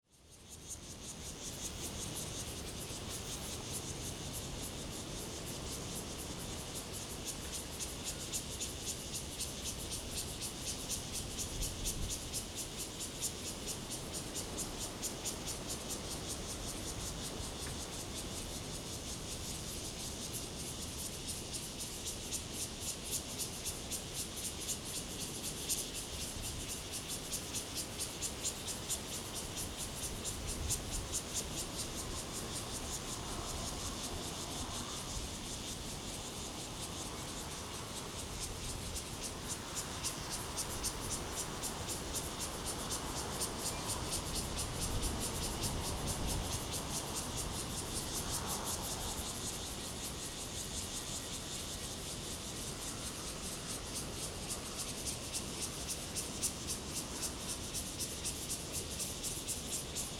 Cicadas sound, Traffic Sound
Zoom H2n MS+XY